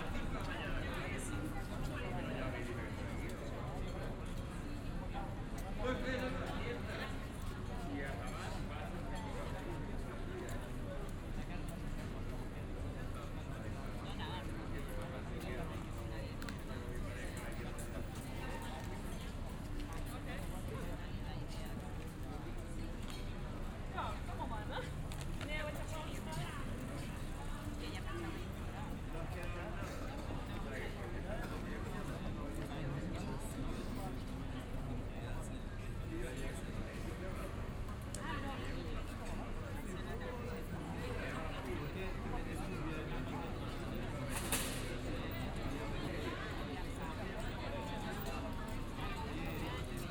Barcelona: Plaza George Orwell
Recorded at Plaza George Orwell in Barcelona, a square famous for its surveillance cameras. It seems a joke, but its real.